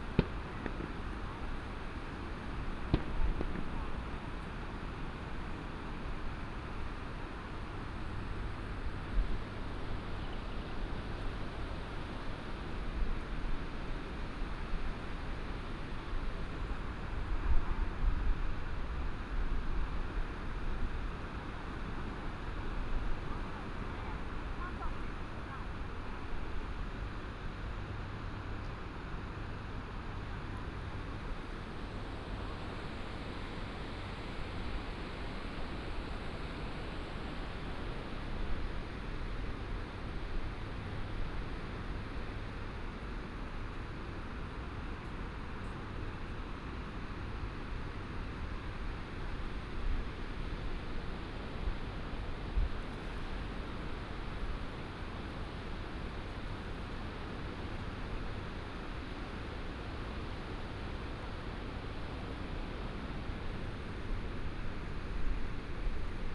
白沙屯漁港, Tongxiao Township - In the fishing port of the beach
In the fishing port of the beach, Fireworks and firecrackers sound
9 March 2017, 11:40